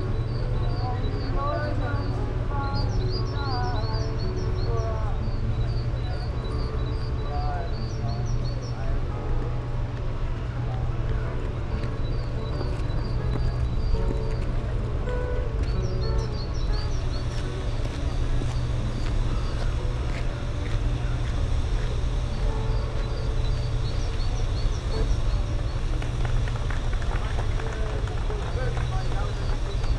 singer at the terrace on the opposite of the landwehrkanal, noise of a nearby construction site, promenadersw, byciclists
the city, the country & me: march 4, 2014
4 March 2014